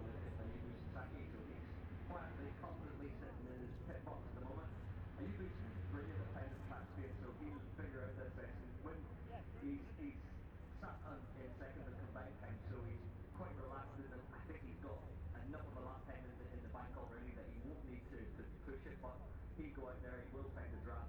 moto three free practice three ... copse corner ... olympus ls 14 integral mics ...
England, United Kingdom, 28 August 2021